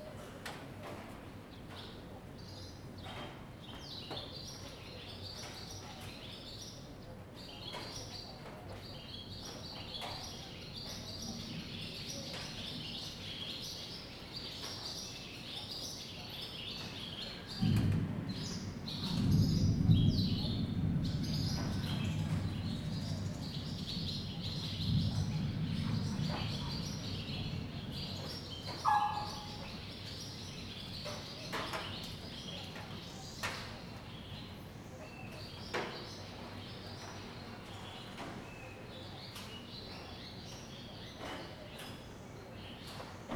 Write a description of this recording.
thunderstorm, Traffic Sound, Zoom H2n MS+XY